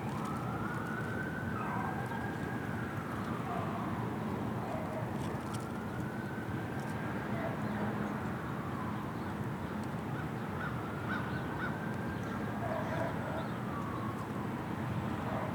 Recording next to railroad tracks in the Energy Park area of St Paul. Unfortunately no trains passed when making this recording.
Recorded using Zoom H5